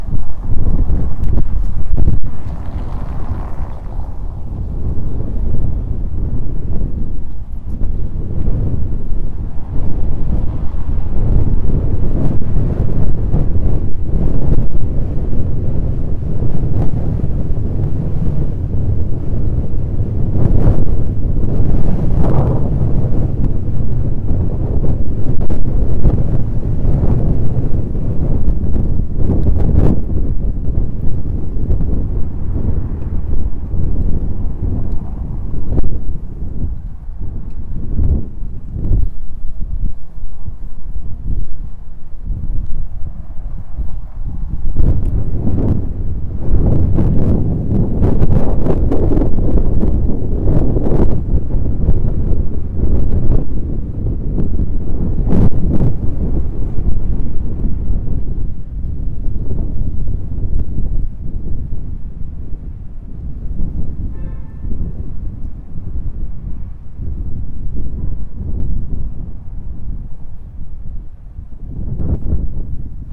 Neuer Wind im Brauquartier
Aus der Serie "Immobilien & Verbrechen". Aufenthaltsqualität im neuen Brauereiquartier.
Keywords: Gentrifizierung, St. Pauli, NoBNQ - Kein Bernhard Nocht Quartier, Brauereiquartier.